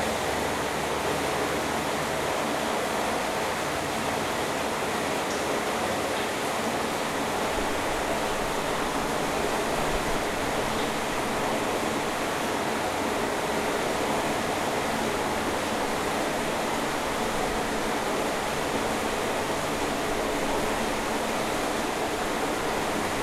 remscheid, eschbachtal: wasserwerk, gully - the city, the country & me: remscheid waterworks, gully
the city, the country & me: may 8, 2011